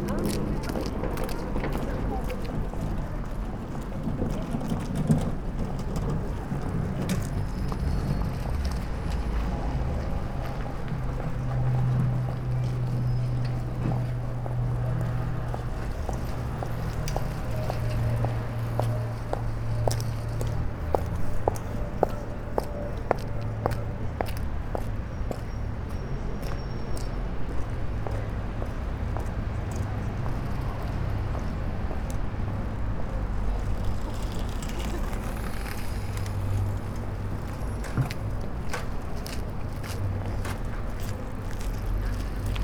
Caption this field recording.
Pedestrians and cyclists. City murmur. Piétons et cylistes. Rumeur de la ville.